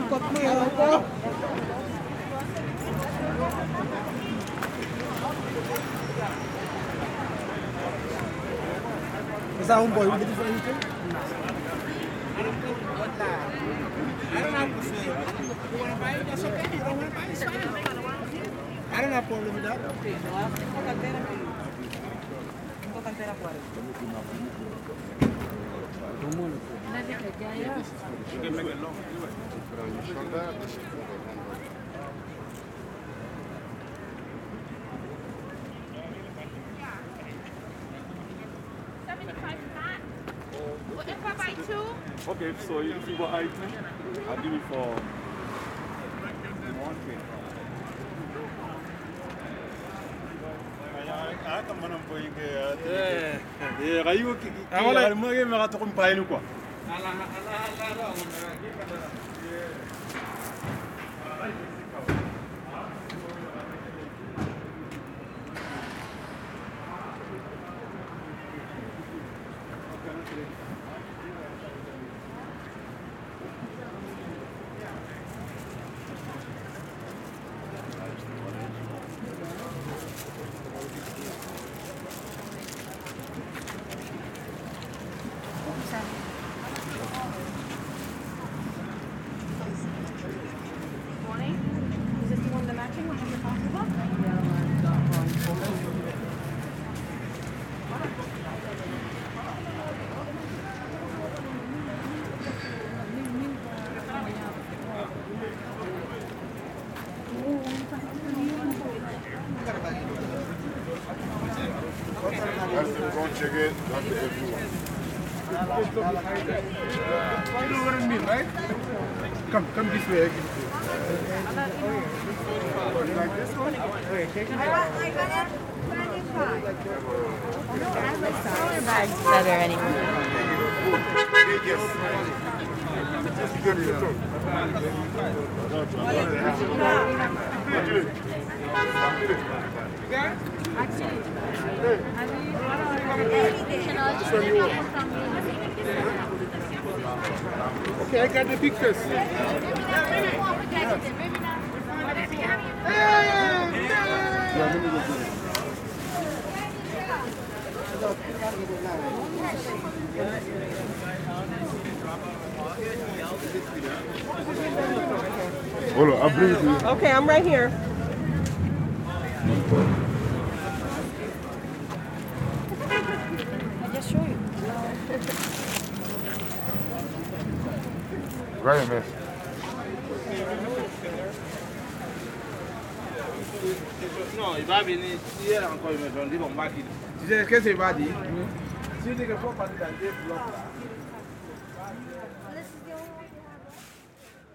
Broadway, New York, NY, USA - Street Vendors at Canal Street
Sound of various street vendors and clients in Canal Street, NY.
United States, 16 May 2021, 13:30